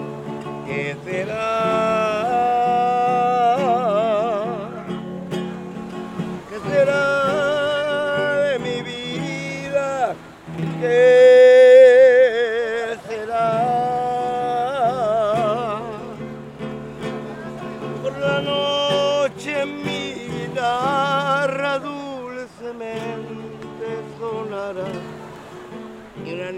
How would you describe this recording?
Progresso - Mexique, Sur la plage, quelques minutes avec Armando